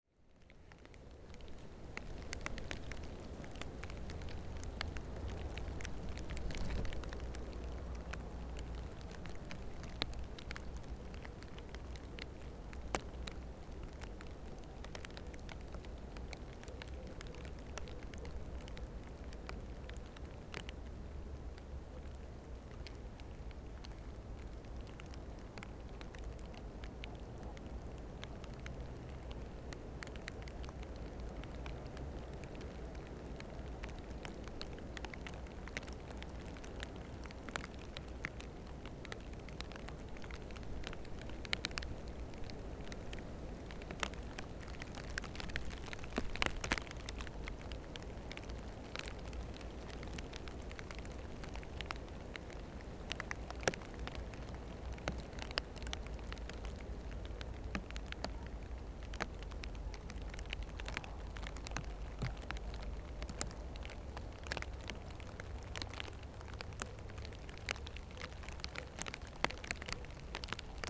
Lithuania, Utena, rain under the leaves
microphones placed under the fallen leaves of maple tree...and rain begins